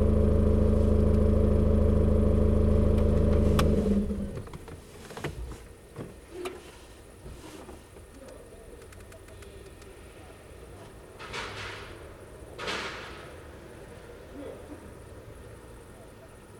Vyšné Nemecké, Slovakia - Crossing into Ukraine
Waiting at the Slovak-Ukrainian border with a guy from Uzhhorod. Binaural recording.
16 July